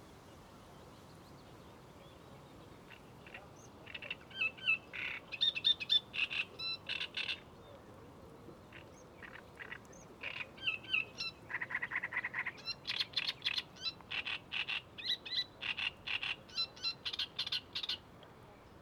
at the river Oder, listening to a sedge warbler (Schilfrohrsänger in german, guessing)
(Sony PCM D50, internal mics)
Letschin, Germany, 31 May